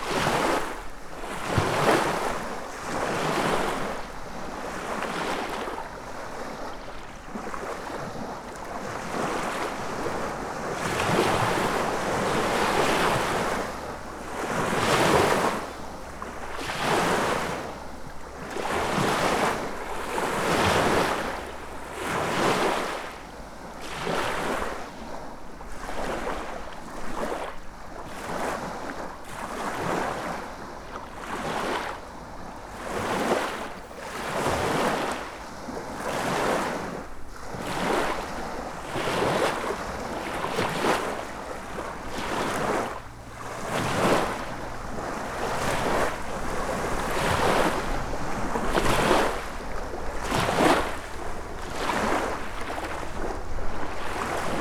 waters' speak always surprise: on a slightly bent lakeshore waves come in blasts
Ignalina, Lithuania, 9 September, 13:55